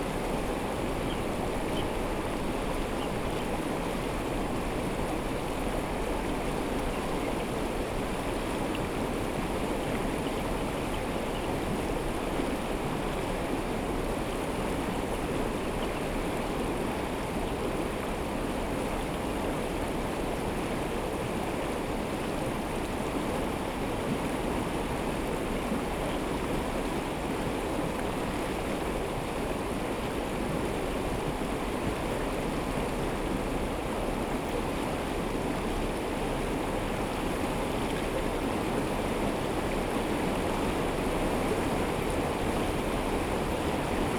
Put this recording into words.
Beside the creek, Stream sound, Traffic sound, Birds sound, Binaural recording, SoundDevice MixPre 6 +RODE NT-SF1 Bin+LR